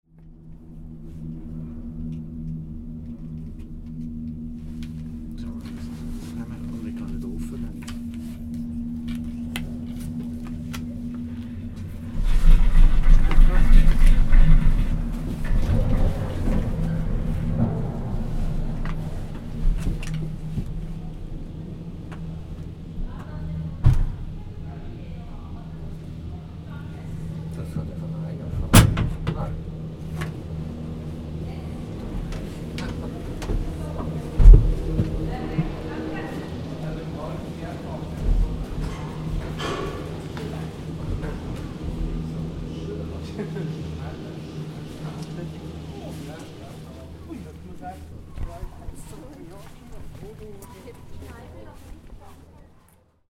Waldenburg, Ankunft mit Seilbahn
Ankunft mit der Seilbahn in Waldenbur von der Wasserfallen her, ruhige Fahrt, maximale Anzahl in der Luftseilbahn 4 Personen